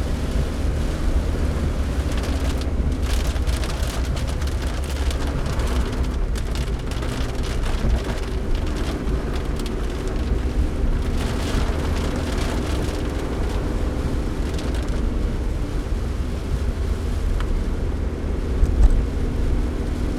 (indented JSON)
{
  "title": "wermelskirchen: zur mühle - the city, the country & me: car drive in the rain",
  "date": "2011-06-18 14:14:00",
  "description": "heavy rain showers, car drive in the rain\nthe city, the country & me: june 18, 2011",
  "latitude": "51.15",
  "longitude": "7.19",
  "altitude": "175",
  "timezone": "Europe/Berlin"
}